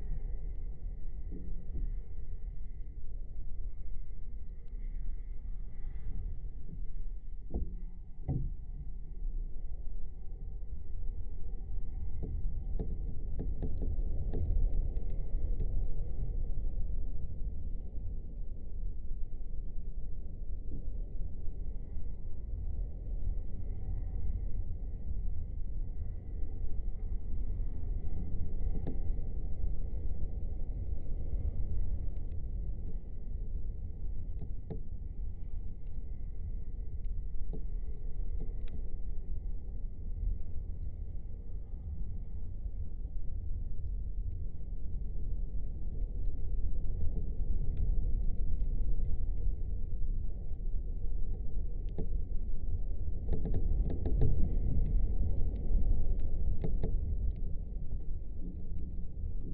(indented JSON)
{
  "title": "Vyzuonos, Lithuania, broken doors",
  "date": "2019-03-03 15:15:00",
  "description": "windy day. abandoned empty warehouse. half brohen wooden doors. contact mics between the wood parts",
  "latitude": "55.57",
  "longitude": "25.50",
  "altitude": "103",
  "timezone": "Europe/Vilnius"
}